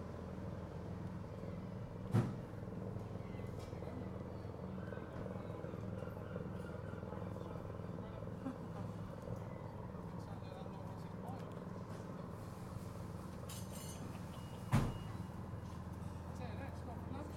Custom House Quay, Weymouth, UK - Removing train tracks alongside the harbour.
A recording of the removal of the train tracks in Weymouth which ran from the railway station to the ferry terminal alongside the harbour. The cross channel ferry to The Channel Islands and France was discontinued in 2015. The last scheduled trains stopped running in the 1980's.
Recorded with a Tascam DR-05X, edited in Audacity.
England, United Kingdom, 11 November 2020, 13:00